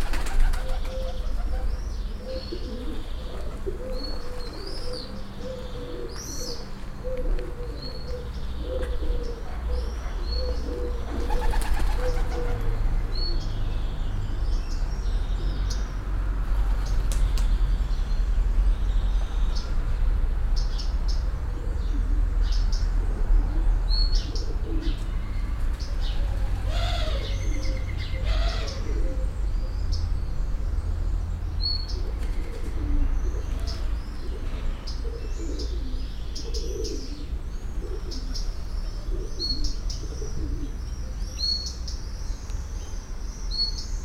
Via O. Caosi, Serra De Conti AN, Italia - the pigeon place
8 of 10 tolling of the bells from the town hall tower (XIX century), murmuring pigeons, flaying pigeons, traffic from distance.
(Binaural: Dpa4060 into Shure FP24 into Sony PCM-D100)